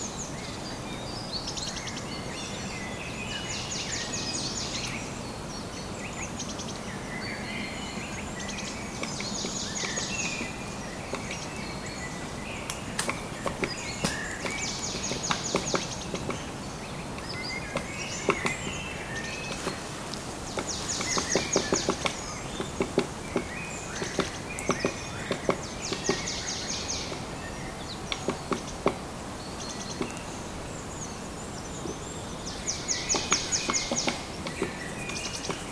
Erlangen, Deutschland - woodpecker
woodpecker at moenau forest, some other birds -